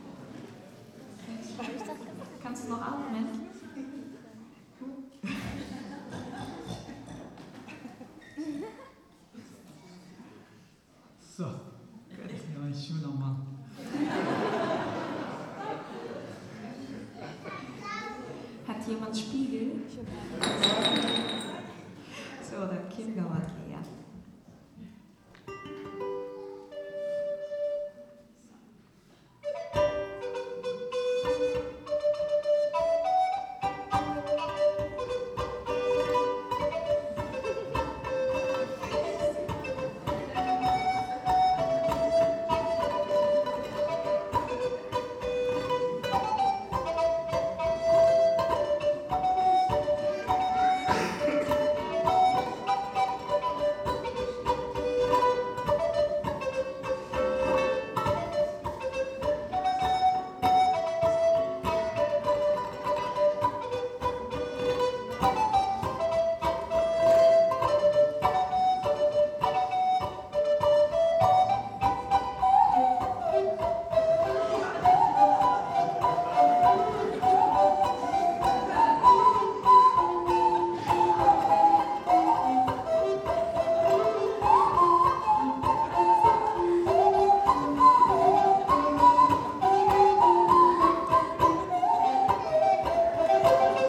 rapideyemovies köln - coconami goes bavarian
10.01.2009 17:00 coconami playing a traditional bavarian melodie in a very special way.